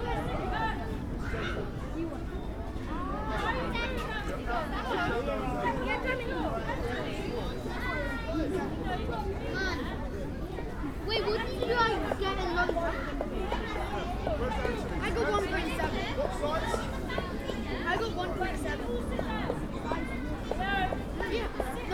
Holland Park, Ilchester Pl, Kensington, London, UK - Holland Park